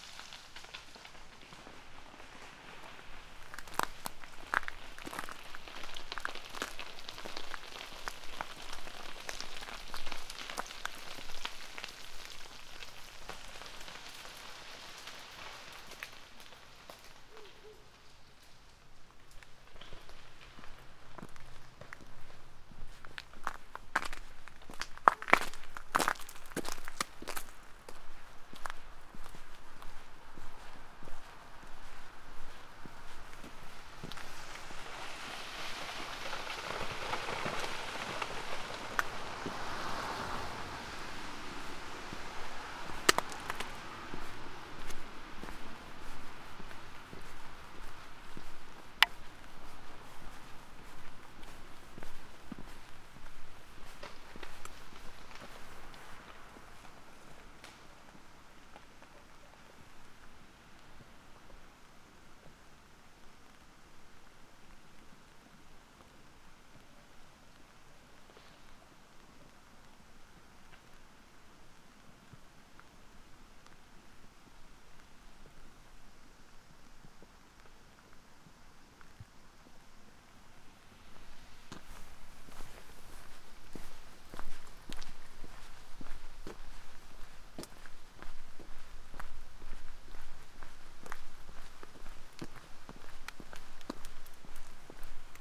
path of seasons, ponds, maribor - tight embrace of frozen rain
beloved trees are breaking all over